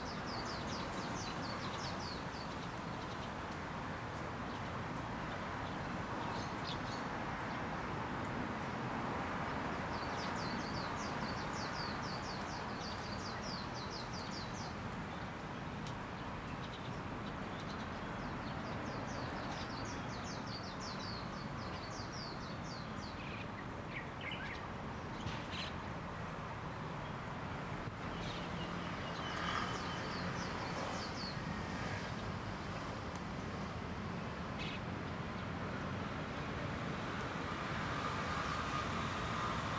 社會住宅Ｂ區鳥鳴 - 三級疫情警戒下的Ｂ區
三級疫情警戒下的Ｂ區戶外空間